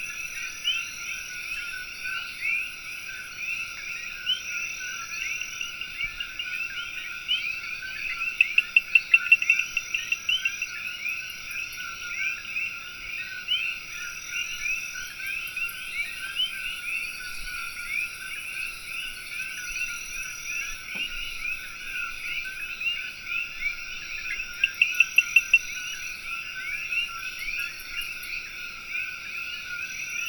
This kind of nightlife is more lively and frenzied than the one in a city club. Snippet of a sound landscape recorded in our house near el Toro Negro forest in Puerto Rico, where we could hear the deafening, yet luscious and vivid sounds of many insects, birds and frogs among unidentified buzz of distant activity.
In Puerto Rico frogs receive the onomatopoeic name of “coqui” because their sound resembles more the chirping and singing that birds usually do. Their call is composed by two syllables: deeper [koo] to put off other males and mark their territory, and higher [kee] to attract females (Narins, P. and Capranica, R. 1976. Sexual differences in the auditory system of the tree frog Eleutherodactylus coqui. Science, 192(4237), pp.378-380). Reaching up to 95dB from 3 feet away, the species heard in this recording is Eleutherodactylus coqui, arguably one of the loudest frogs in the world (Narins, P. 1995. Frog Communication. Scientific American, 273(2), pp.78-83).